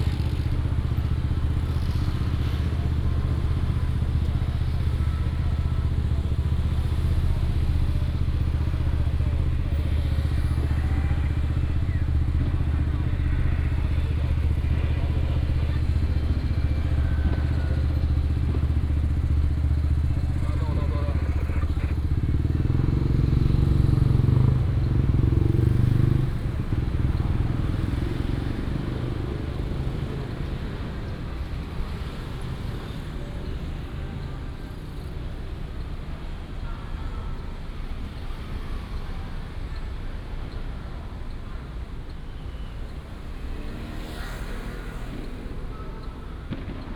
Ln., Sec., Linsen Rd., Huwei Township - At the corner of the road
Fireworks and firecrackers, Traffic sound, Baishatun Matsu Pilgrimage Procession